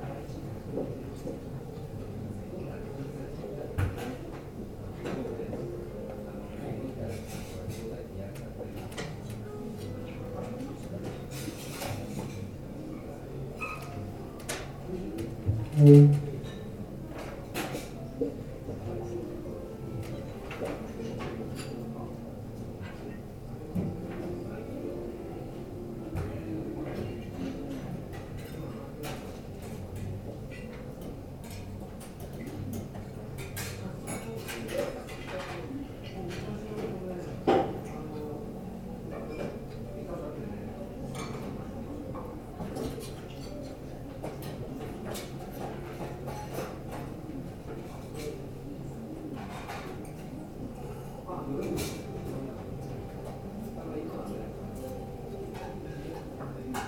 Below the lounge where this was recorded, there was a pianist playing live and a water feature. You can hear the sounds of the piano drifting up to where we sat; the view across the city from so high was so amazing I decided to just sit and look and listen (and record). The cooking sounds are coming from the Molecular Tapas Bar where micro-gastronomic treats are served each night to small groups of just 8 people at a time. You can also hear other people talking, drinks being served, and something of the high-glass/plush-lined interior of this insanely opulent place.
14 February 2017, 20:40